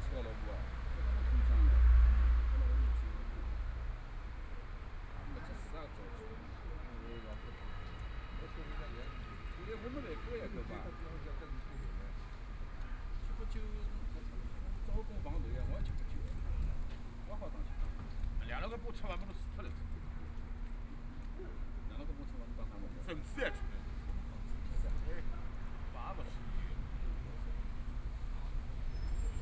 Penglai Park, Shanghai - in the park
Playing cards, Bells from schools, Binaural recording, Zoom H6+ Soundman OKM II